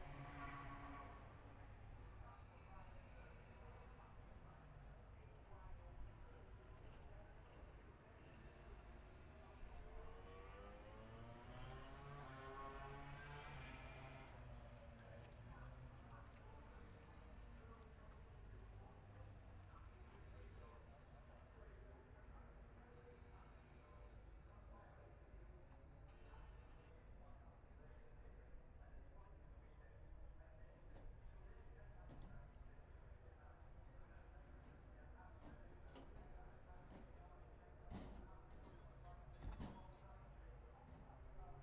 Towcester, UK - british motorcycle grand prix 2022 ... moto grand prix ...
british motorcycle grand prix 2022 ... moto grand prix free practice one ... dpa 4060s clipped to bag to zoom h5 ... wellington straight adjacent to practice start ...